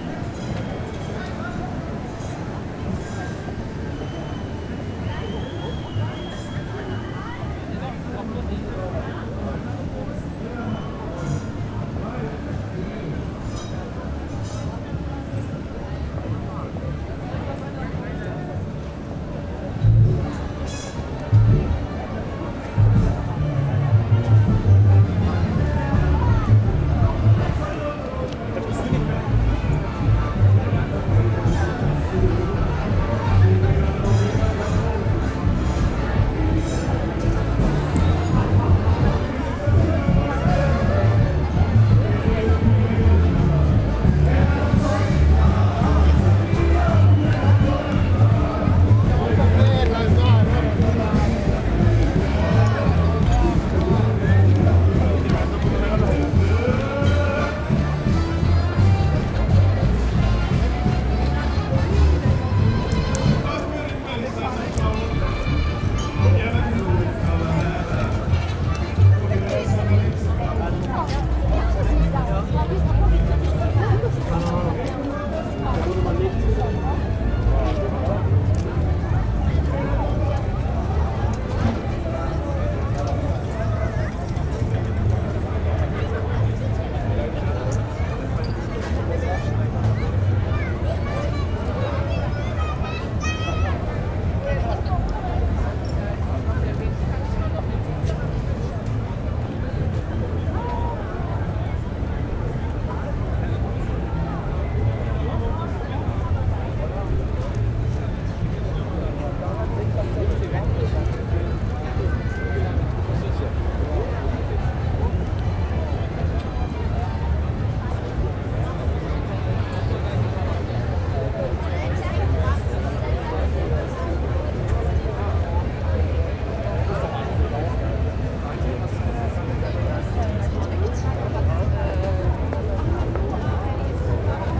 berlin: blücherstraße - the city, the country & me: carnival of cultures
soundwalk during the carnival of cultures
the city, the country & me: june 12,2011
Berlin, Germany, 12 June, 22:31